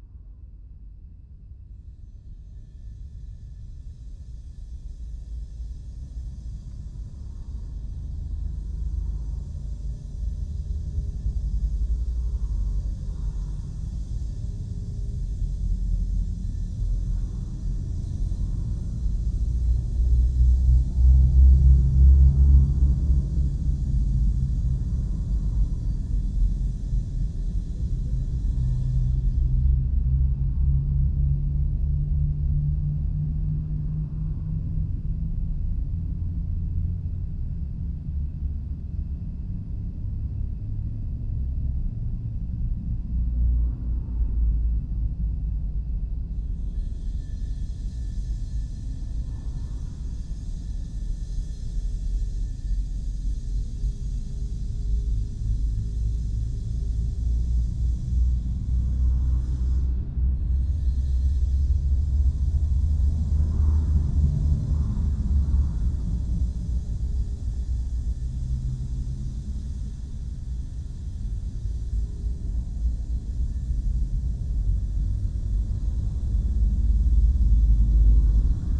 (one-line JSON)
{"title": "Utena, Lithuania, pipe under the street", "date": "2017-07-28 14:50:00", "description": "there is new pipe for water under the street. I have placed a pair of omnis in it", "latitude": "55.51", "longitude": "25.60", "altitude": "103", "timezone": "Europe/Vilnius"}